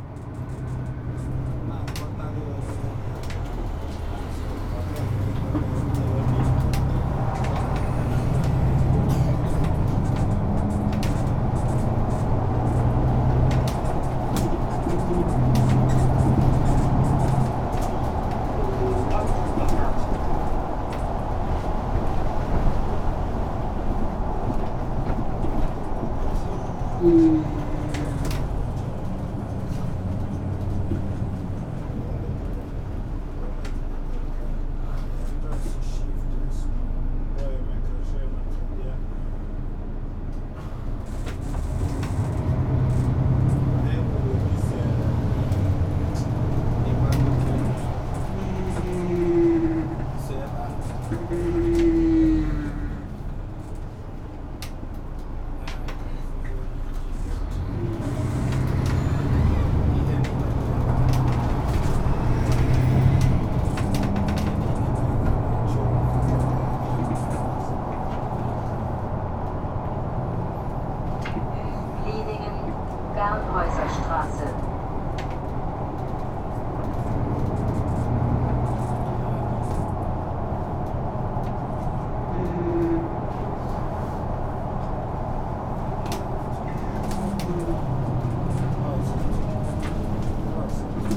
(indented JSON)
{
  "title": "Plieningen, Stuttgart - Urbanes 131205 Buslinie 74",
  "date": "2013-12-05 11:00:00",
  "description": "Bus ride to Stuttgart\nSony PCM D50",
  "latitude": "48.70",
  "longitude": "9.21",
  "altitude": "363",
  "timezone": "Europe/Berlin"
}